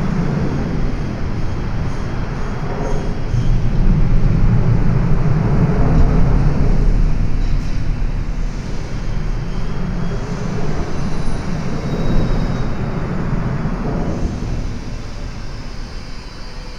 next to Guggenheim Bilbao - next to Guggenheim Bilbao (schuettelgrat)
next to Guggenheim Bilbao, under the motorway at noon